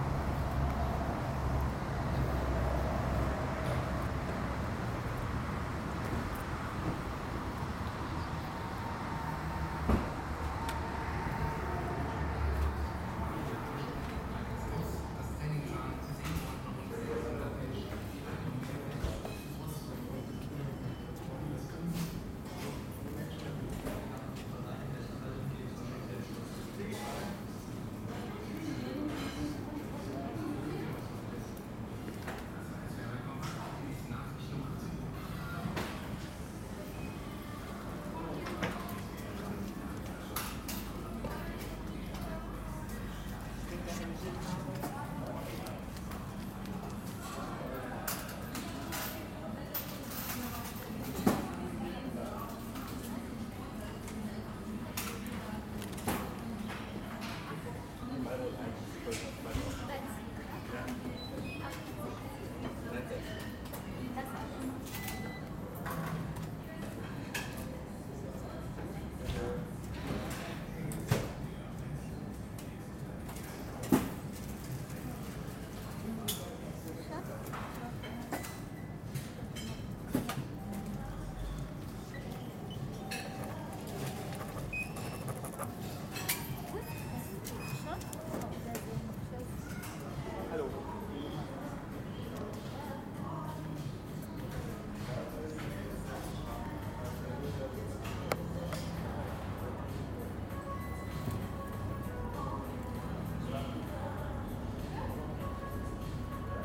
siegburg, motorway service area
recorded june 30th, 2008.
project: "hasenbrot - a private sound diary"